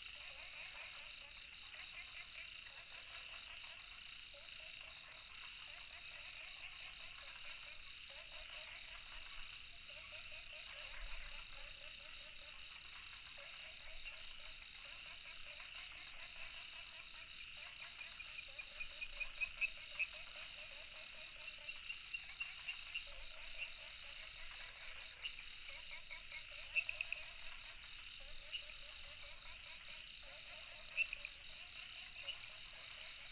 顏氏牧場, 桃米里, Taiwan - Frogs chirping and Insect sounds
Frog sounds, Insect sounds